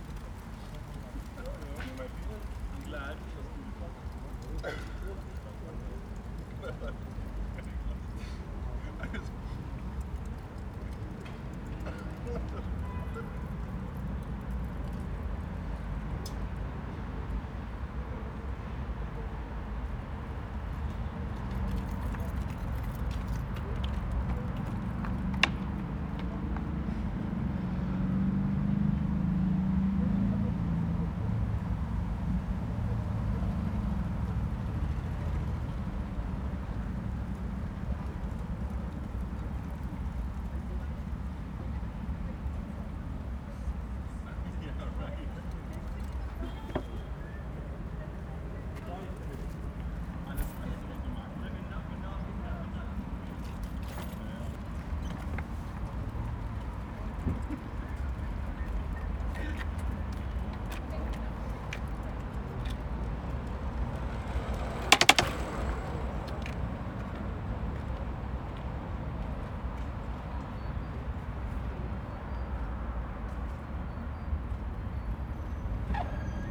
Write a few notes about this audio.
Beside the Imbiss, 2 men with 2 beers. The tiny place is dwarfed by the expanse of big streets, high concrete buildings, derelict multi-story blocks from the DDR and active construction sites. The men chat a little. A cyclist passes close by causing a metal strip on the pavement to crack loudly. A photo shoot with two young models (guys) gorging on pizza in front of a shocking pink paper backdrop is taking place. A perfectly slim assistant in a short yellow dress gazes at her phone. Totally surreal. Siren blaring ambulances speed by often. Trees are regimental in precise rows. The exact opposite of nature.